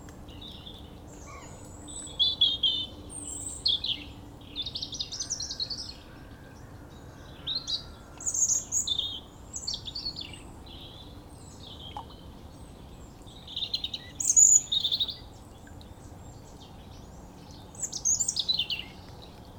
Vironvay, France - Robin
A small robin is furious I am here, in its home, and it says me hardly.